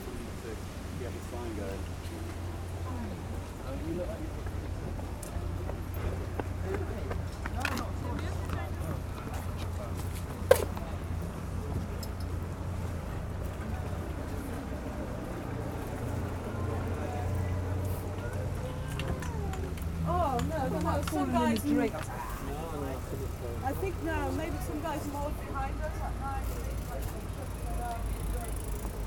Broadway Market, Hackney, London, UK - Walk Through Broadway Market to Regents Canal
A walk through Broadway Market in Hackney, East London up to Acton's Lock on Regents Canal and back down into the market. Recorded on a Roland hand-held digital recorder (R-05?) with in-built stereo mics.
Greater London, England, United Kingdom, 17 October 2010